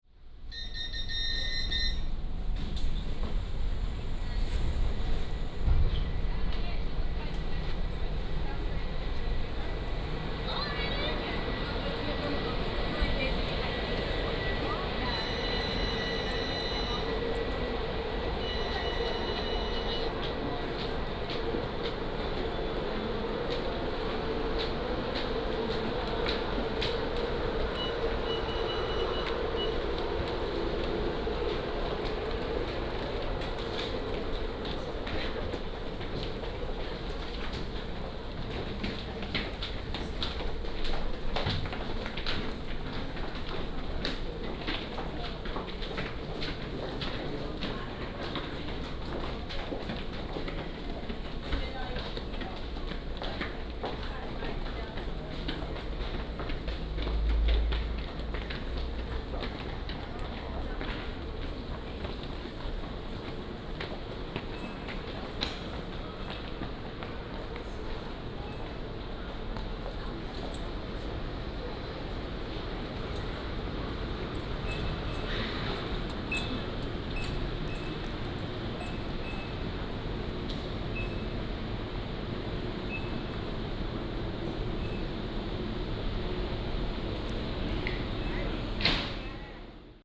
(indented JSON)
{
  "title": "Tainan Station, 台南市東區 - Footsteps",
  "date": "2017-02-18 09:16:00",
  "description": "From the station platform, Footsteps, Through the underground passage, Go to the station exit",
  "latitude": "23.00",
  "longitude": "120.21",
  "altitude": "22",
  "timezone": "GMT+1"
}